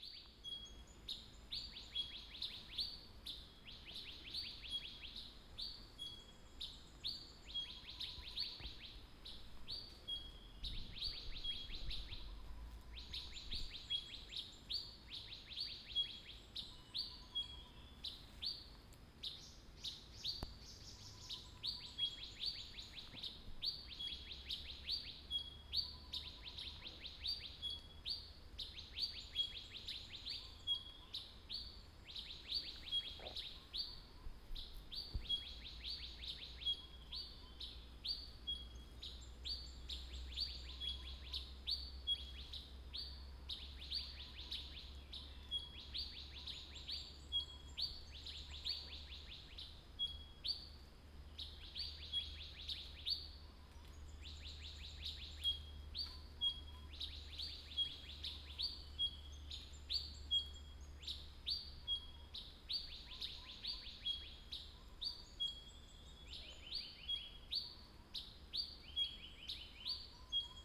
水上, Puli Township, Nantou County - Birds singing
Birds singing, Next to the woods